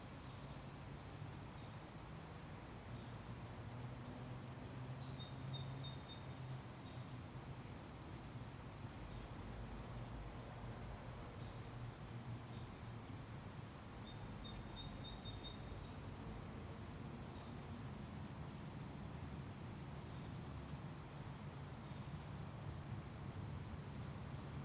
Seminary Av:Laird Av, Oakland, CA, USA - A forest on a highway
I used an H4N Pro Zoom Recorder for this field recording. I placed the recorder on a tripod nearby a creek surrounded by trees and wildlife. This location also happened to be close to a highway.